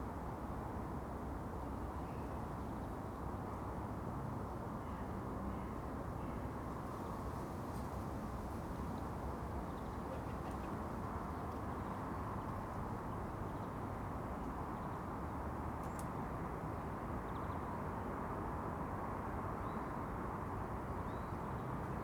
Contención Island Day 41 inner southwest - Walking to the sounds of Contención Island Day 41 Sunday February 14th
The Drive Westfield Drive Fernville Road Park Villas
Road noise
drifted in on the wind
Little moves
in the cold-gripped cul-de-sac
14 February 2021, 10:31